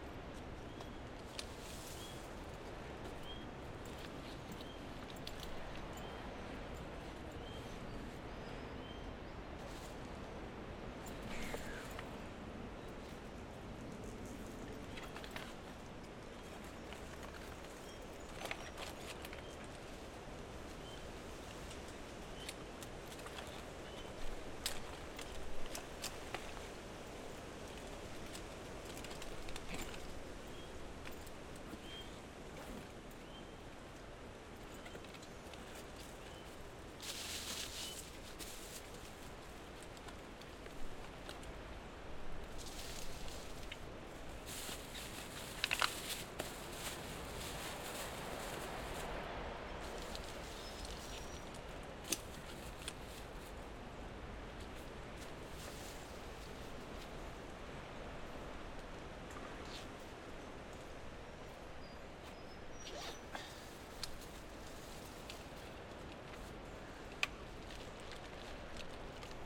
Royal National Park, NSW, Australia - Leaving my microphone in the coastal forest at dusk
Packing away my gear, making sure every thing was set-up right and also being bit by a large march fly.
Recorded with an AT BP4025 into a Tascam Dr-680.
BixPower MP100 was used as an external battery, it still had about half it's battery life left when I picked it up the next morning.
Lilyvale NSW, Australia, 28 September 2014